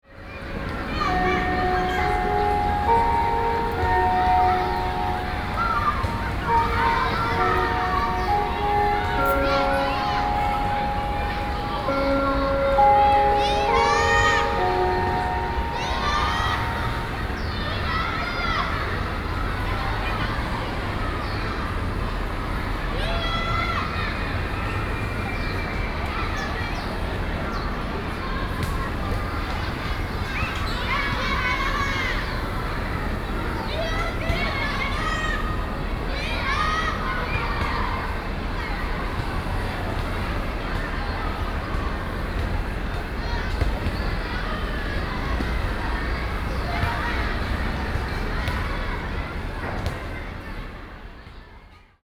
North New Elementary, New Taipei City - School broadcasting
School broadcasting, Zoom H4n+ Soundman OKM II